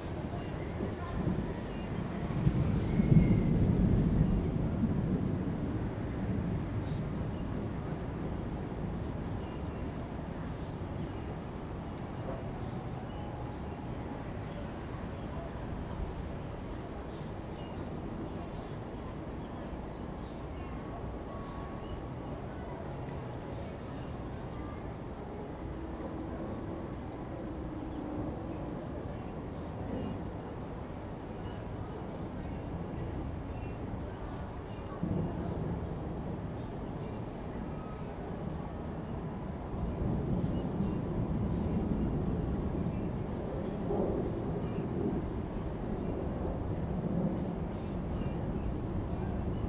Bitan Rd., Xindian Dist., New Taipei City - Thunder sound
The other side came the sound of construction, Thunder sound, birds
Zoom H2n MS+ XY
Xindian District, New Taipei City, Taiwan, 28 July 2015